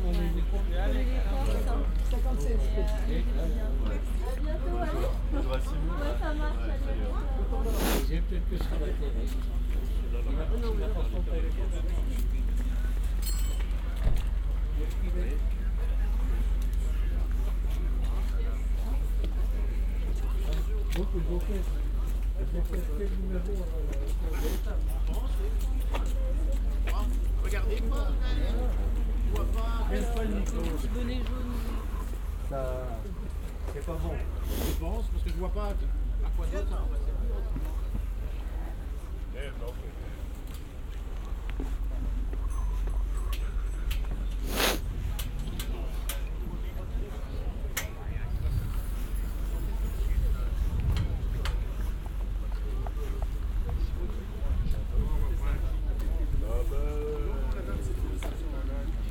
Tournai, Belgium - Sunday Flea Market
Tournai flea market on Sunday morning
Wallonie, België / Belgique / Belgien, 27 February 2022